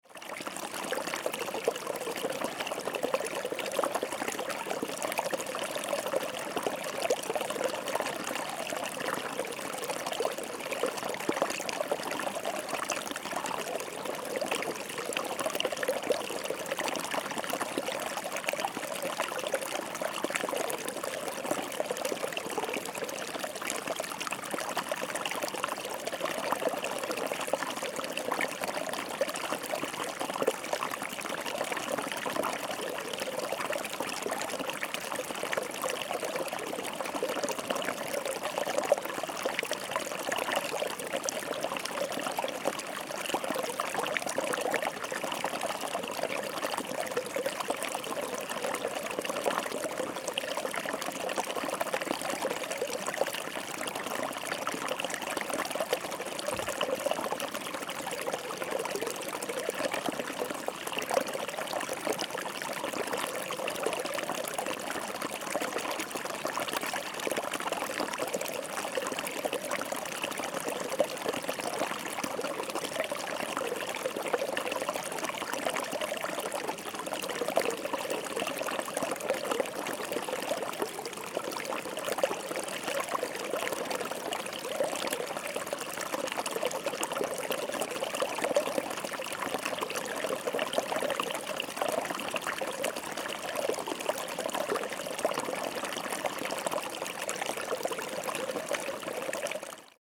The brook flows into the river Solza, Severodvinsk, Russia - The brook flows into the river Solza
The brook flows into the river Solza.
Recorded on Zoom H4n.
Журчание ручья впадающего в реку Солзу.